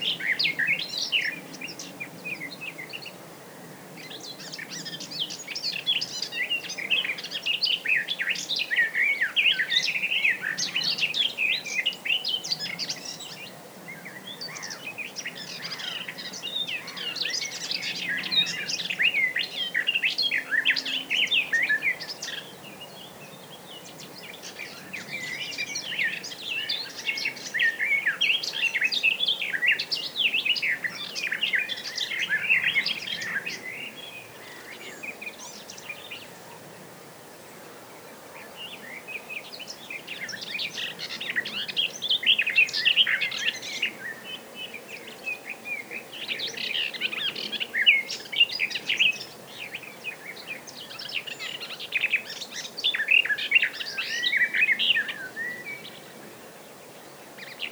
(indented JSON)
{
  "title": "tondatei.de: rheindelta, fussach, vogelreservat",
  "description": "vogelgezwitscher, vogelgesang, schiff",
  "latitude": "47.50",
  "longitude": "9.67",
  "altitude": "396",
  "timezone": "Europe/Berlin"
}